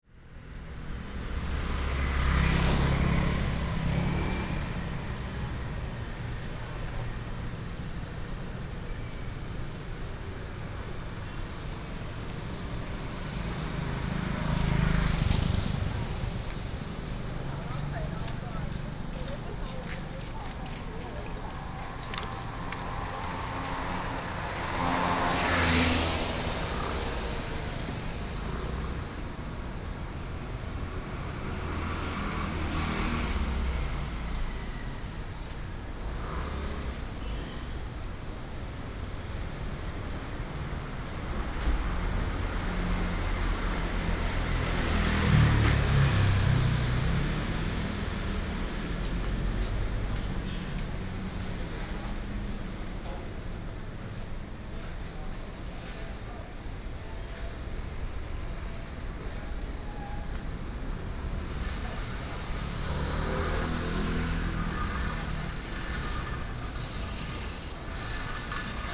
Walking through the street, Traffic Sound, Shopping Street